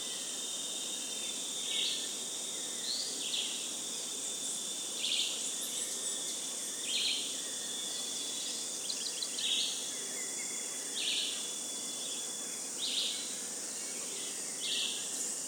Parque da Cantareira - Núcleo do Engordador - Trilha da Cachoeira - iv
register of activity